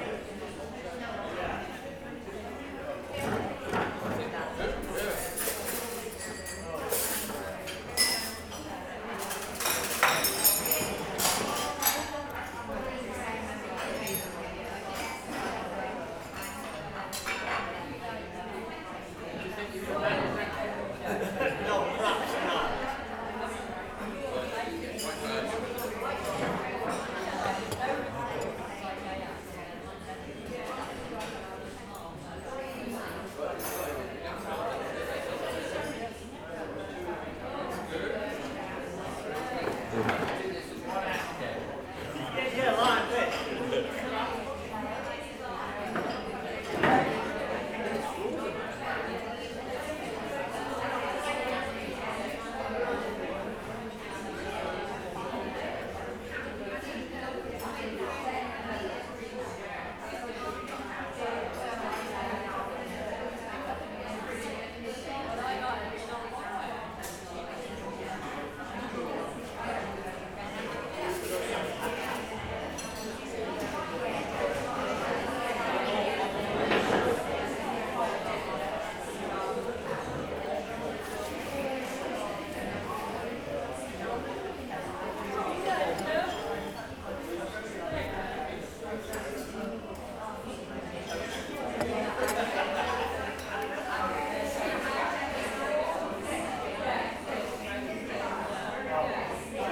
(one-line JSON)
{"title": "Knightshayes Court, Tiverton, UK - Knightshayes Court canteen", "date": "2017-09-06 11:05:00", "description": "This recording was made in the stables cafe at Knightshayes Court. The canteen was about half full. Recorded on a Zoom H5", "latitude": "50.93", "longitude": "-3.48", "altitude": "155", "timezone": "Europe/London"}